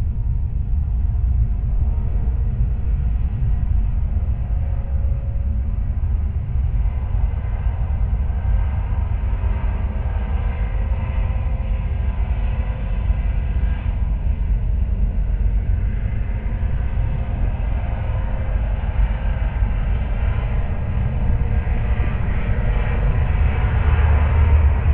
SBG, Serra del Oratori - poste alta tensión
La acción del viento y un helicóptero que sobrevuela muy cerca, escuchados a través de un poste de alta tensión situado en lo alto de la sierra.